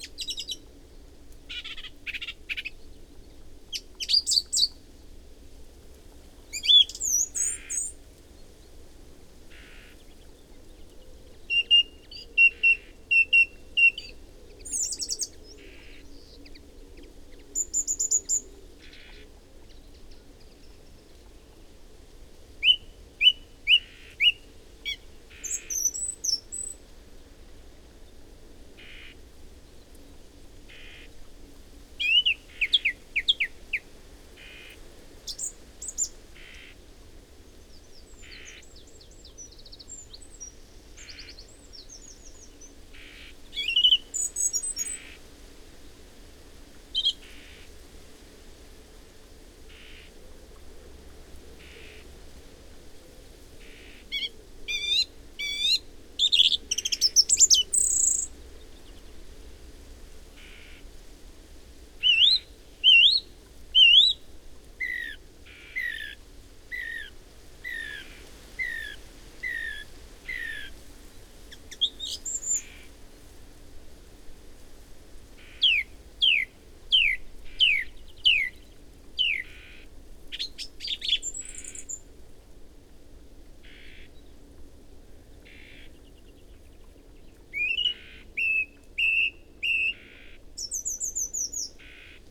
Luttons, UK - Singing song thrush ... horse and rider approaching ...
Song thrush singing ... horse and rider approaching ... parabolic ... background noise ... song and calls from whitethroat ... wren ... chaffinch ...
Malton, UK